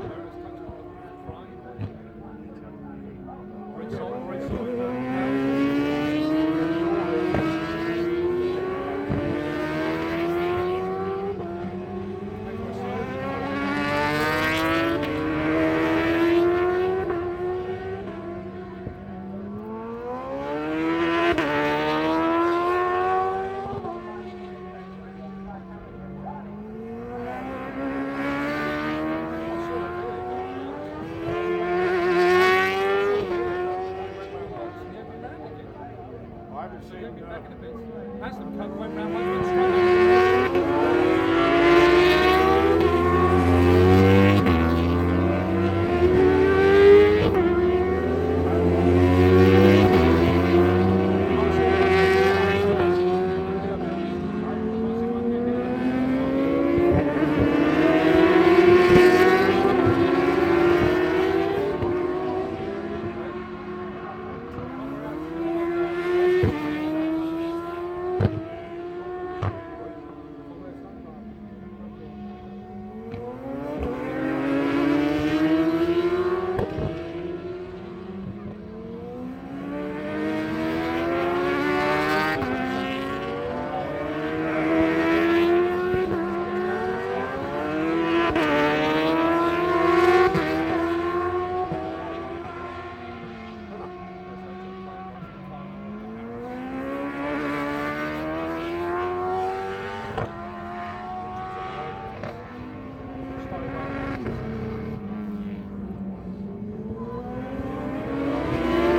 Unit 3 Within Snetterton Circuit, W Harling Rd, Norwich, United Kingdom - british superbikes 2006 ... superbikes ...
british superbikes 2006 ... superbikes free practice ... one point stereo mic to minidisk ...
17 June